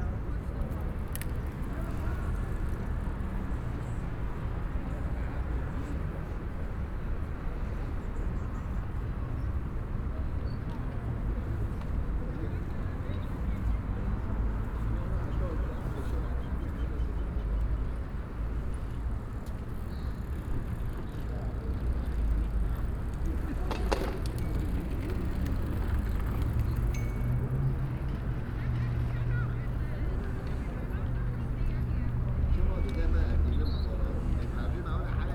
Platz der Deutschen Einheit, Hamm, Germany - in front of city library closed doors
lingering in front of the city library entrance, locked doors, usually it would be busy in and out here this time...
Nordrhein-Westfalen, Deutschland, 1 April 2020, ~17:00